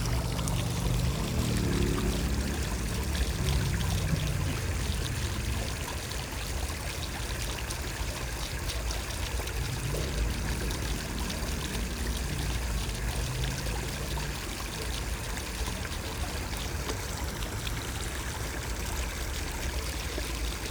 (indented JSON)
{
  "title": "Kopernikusstraße, Linz, Austria - Trickling fountain for small kids",
  "date": "2020-09-09 15:42:00",
  "description": "Young children like this small fountain. You can hear one protesting (briefly) as he is carried away from it. Once there were more elaborate toys - waterwheels, scopes etc - for playing with the water but they are no longer here. So they just climb on the low concrete and get thoroughly wet. There is a gurgling drain on one side.",
  "latitude": "48.27",
  "longitude": "14.30",
  "altitude": "283",
  "timezone": "Europe/Vienna"
}